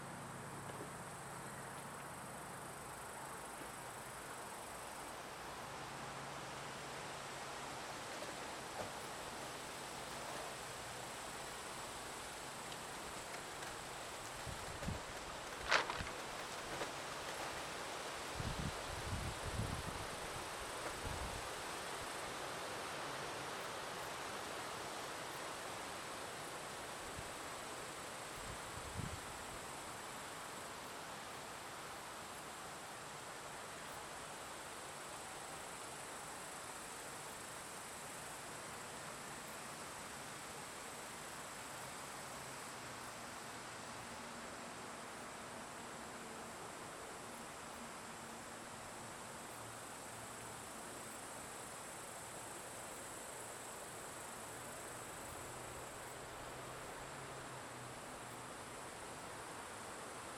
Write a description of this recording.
You can hear insects and plants making sounds in the grass, trees rustling their leaves, and the wind blowing. At the very end, you can hear the hum of an airplane.